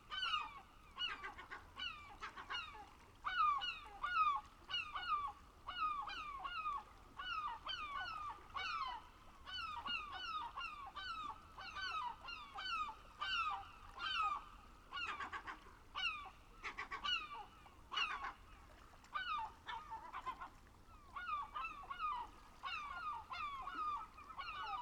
ENVOL CANARDS BALLET DE MOUETTES, Sortland, Norvège - ENVOL CANARD ET BALAIE DE MOUETTES
La beauté de cet envol de canard ce matin après le levé de soleil aux Vesteralen.... Puis 2 Mouettes m'int enchanté les oreilles dans une chorégraphie magnifique. Et enfin un petit seau est venu exiger l'acoustique du ce bord de mer boisé..... J'ai adoré